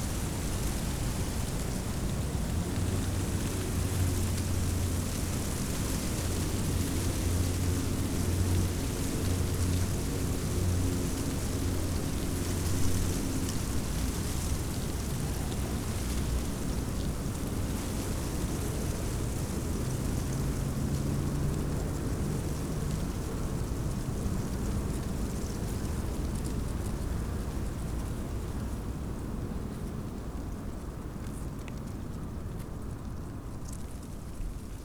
burg/wupper: nähe diederichstempel - the city, the country & me: dry leaves of a bush rustling in the wind
the city, the country & me: february 8, 2012
Solingen, Germany, 8 February